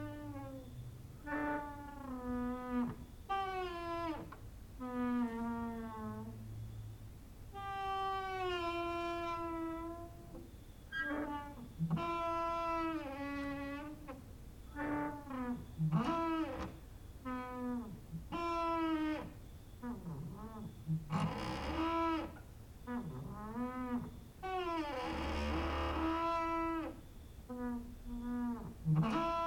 Mladinska, Maribor, Slovenia - late night creaky lullaby for cricket/14/part 1
cricket outside, exercising creaking with wooden doors inside